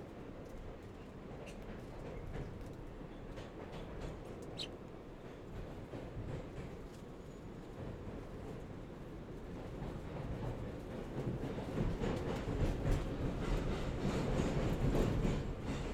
St, Lexington &, E 51st St, New York, NY, USA - 6 train at Lexington Avenue/51st Street station
Getting the 6 train at Lexington Avenue/51st Street station.
Some crackling sounds from a man carrying a bag of recycled bottles.